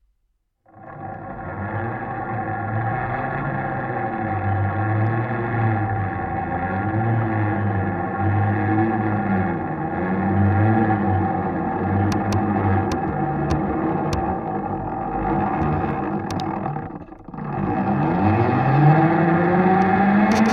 Roja playground, Rojas pagasts, Latvia - zipline from within
Using a contact mic to record the structure of a zipline
August 2016